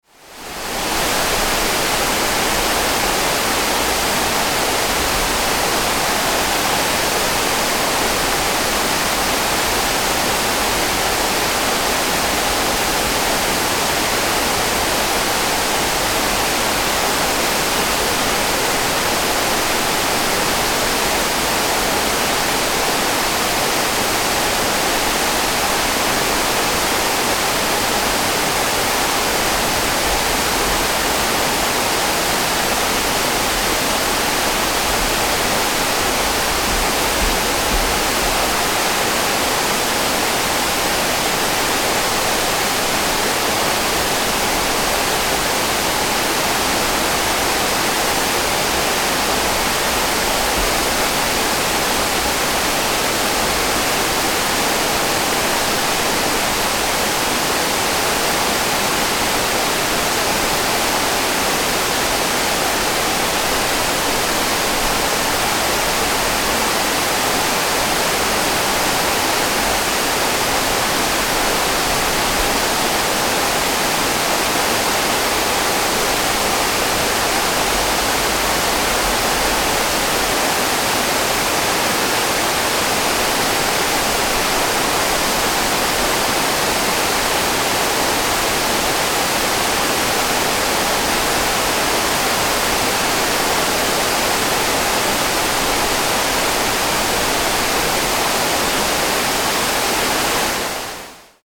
Rheinfall, Schweiz - Wasserfall
4 Kanalaufname und gemixt auf Stereo.